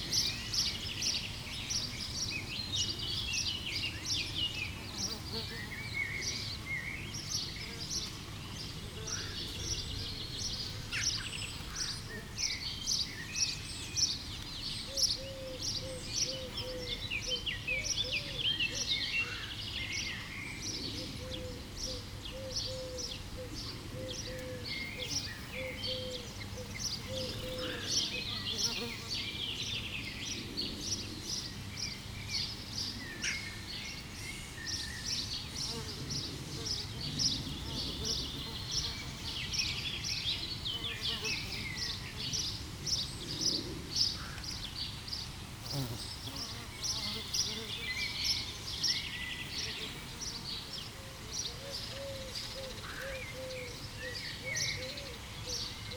Dennevy, France - French rural landscape
What is the typical sound of a french rural landcape ? Immediatly, I think about a small Burgundy village. It's probably an Épinal print, but no matter. Beyond the stereotype, for me it's above all sparrows, Eurasian collared doves and if summer, a lot of Common Swifts shouting in the sky. Also, it's distant bells, old mobylettes and cycles bells. As countryside, it's often very nag, I let the 4 minutes of mower at the beginning. At the end of the recording, a boat called Adrienne is passing by on the Burgundy canal. The bridge is very small for the boat, thus craft is going extremely slowly.
16 June